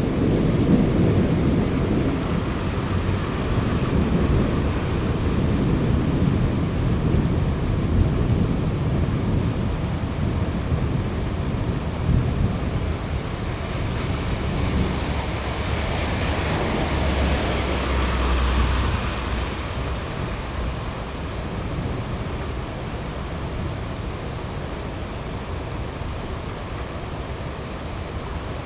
Ave, Ridgewood, NY, USA - Heavy rain with distant a thunderstorm
Heavy rain with a distant thunderstorm.
Zoom H6